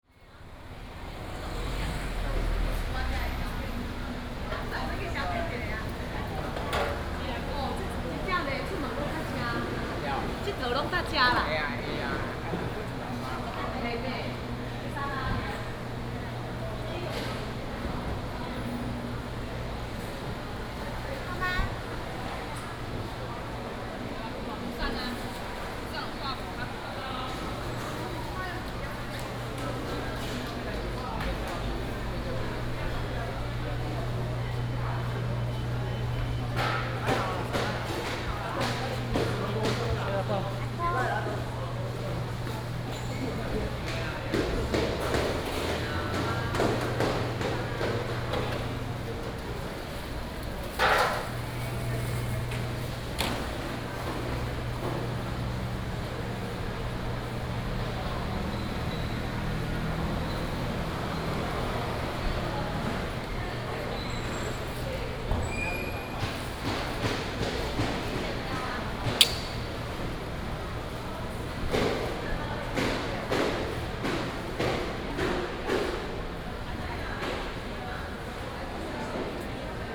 四張犁黃昏市場, Beitun Dist., Taichung City - Dusk Market
in the Dusk Market, Traffic sound, The vendors are sorting out, Binaural recordings, Sony PCM D100+ Soundman OKM II